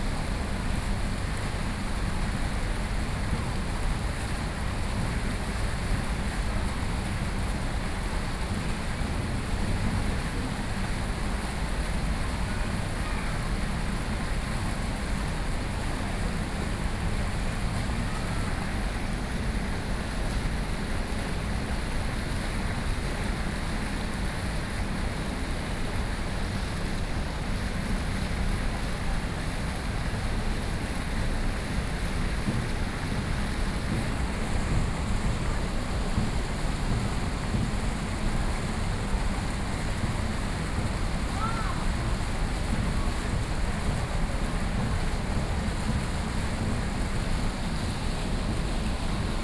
Banqiao District, New Taipei City - In the plaza
In the plaza outside the government building, Pool sound, Students practice dance music, Binaural recordings, Sony Pcm d50+ Soundman OKM II